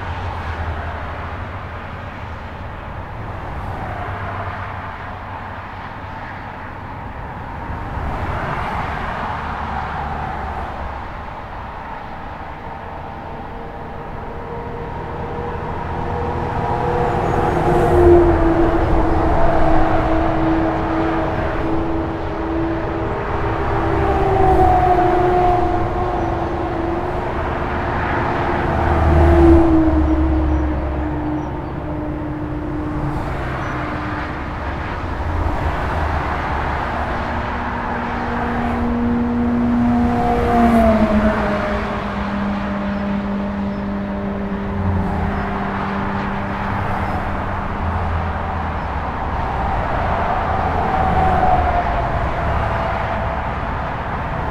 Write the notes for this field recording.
A dense trafic on the local highway, called N25.